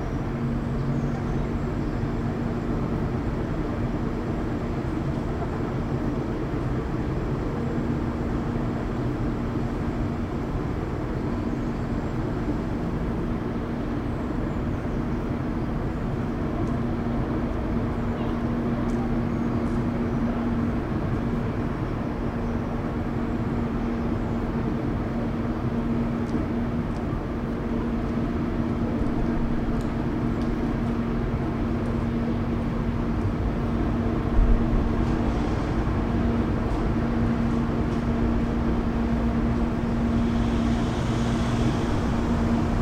Frederiksplein 26, Amsterdam, The Netherlands
world listening day, WLD, sorry this is late, tram, track repair, Weteringschans, Stadhouderskade, big hum
20 July 2010, 13:18